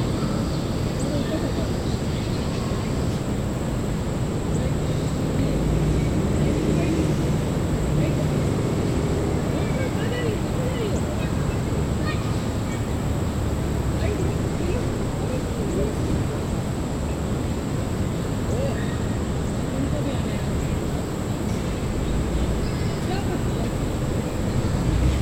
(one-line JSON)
{"title": "Rottenwood Creek Trail, Atlanta, GA, USA - Busy River Park", "date": "2020-09-13 15:36:00", "description": "A recording of a busy trailhead taken in the middle of a patch of grass. Some people were camped out on the greenspace and others were walking. A few people passed the recording rig by foot and the sound of vehicles driving in the background is prominent. The insects were particularly active today. A child ran up to the recorder right before the fade.\nRecorded with the Tascam DR-100 mkiii. Some minor eq was done in post.", "latitude": "33.87", "longitude": "-84.45", "altitude": "242", "timezone": "America/New_York"}